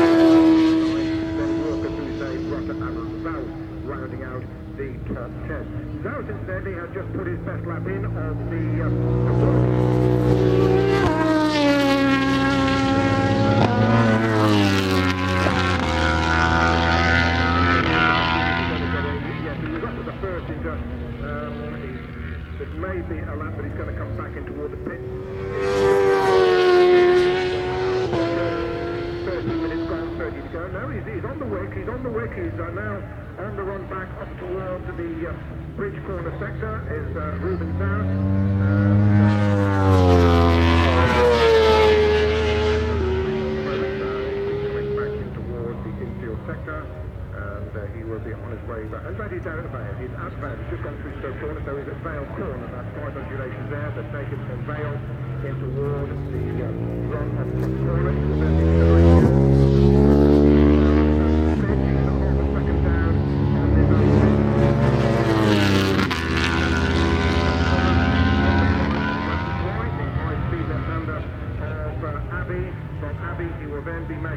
Silverstone Circuit, Towcester, United Kingdom - World Superbike 2003 ... Qualifying ...
World Superbike 2003 ... Qualifying ... part one ... one point stereo mic to minidisk ...
14 June 2003, ~12:00